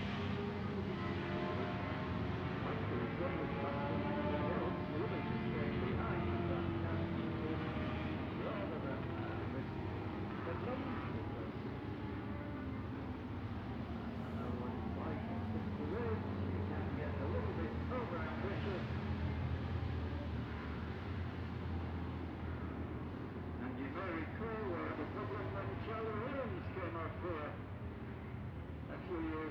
Jacksons Ln, Scarborough, UK - barry sheene classic 2009 ... parade laps ...
barry sheene classic 2009 ... parade laps ... one point stereo mic to minidisk ...